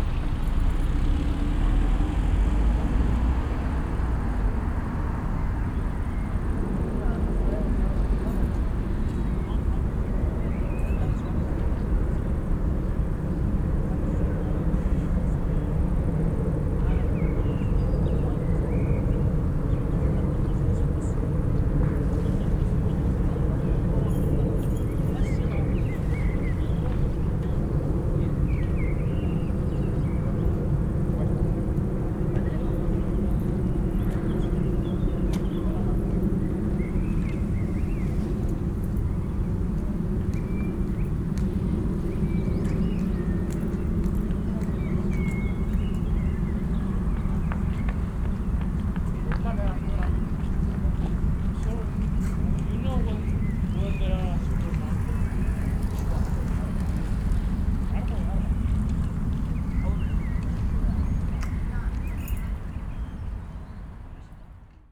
Dieselstr, Neukölln, Berlin - allotment, evening ambience
summer evening ambience at abandoned allotments, some activity in the street, voices, drone of an airplane. the area along the planned route of the A100 motorway is closed and fenced since a while, but it seems that families recently moved in here, and live under difficult conditions, no electricity, water etc.
(Sony PCM D50, DPA4060)
Deutschland, European Union, 8 July 2013, ~22:00